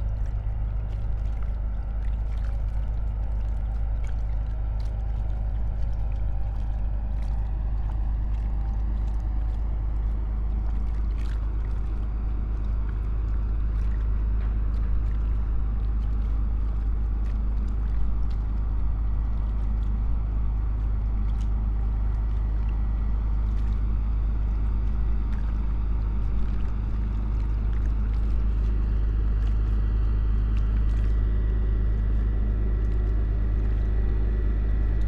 Berlin, Plänterwald, Spree - Saturday afternoon ambience
place revisited on a Saturday afternoon in winter. Coal frighters at work, a woodpecker in the tree, gentle waves of the river Spree.
(SD702, MKH8020)
Berlin, Germany